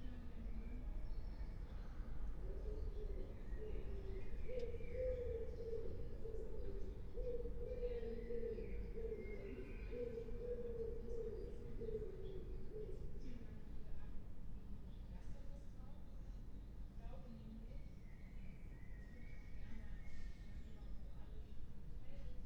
Berlin Bürknerstr., backyard window - Hinterhof / backyard ambience
20:29 Berlin Bürknerstr., backyard window
(remote microphone: AOM5024HDR | RasPi Zero /w IQAudio Zero | 4G modem